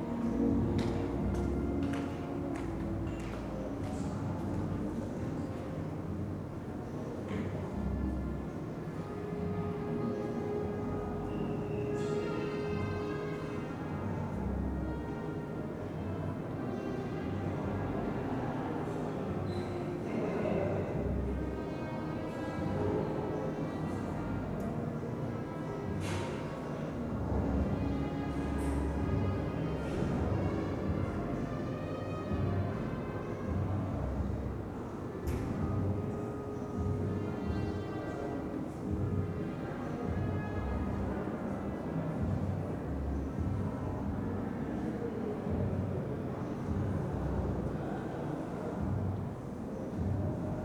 lobby during a concert of giant sand at wassermusik festival
the city, the country & me: august 5, 2011